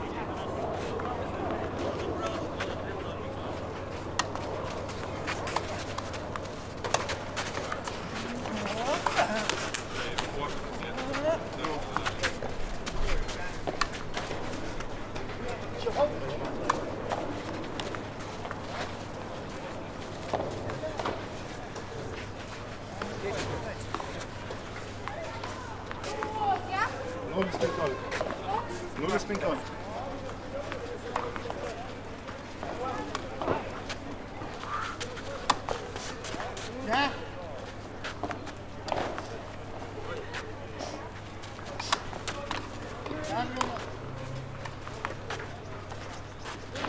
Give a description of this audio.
Sounds of the Bernardinai garden tennis court during busy hours. Recorded with ZOOM H5.